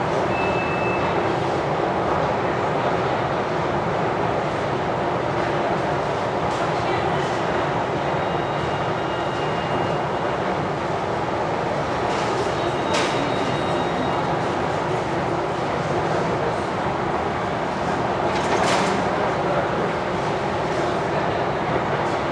Ленинский пр-т., Москва, Россия - Leninsky Prospekt metro station
At the exit (inside) of the lobby of the Leninsky Prospekt metro station. You can hear the esclator working, the turnstiles opening, the train coming, people talking to each other, the loudspeaker asks everyone to be careful.